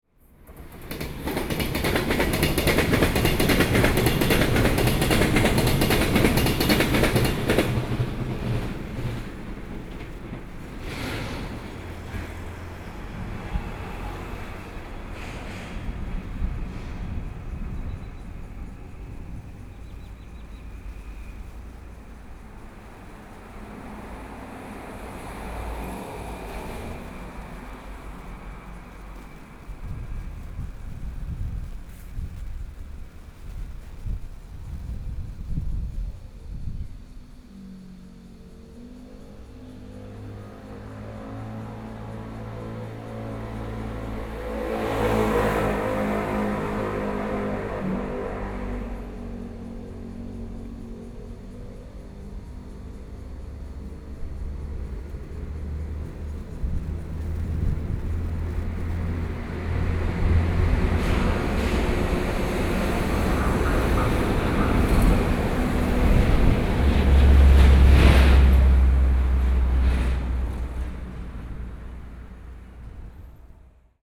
Yangmei City, Taoyuan County - Train traveling through
Train traveling through, Sony PCM D50 + Soundman OKM II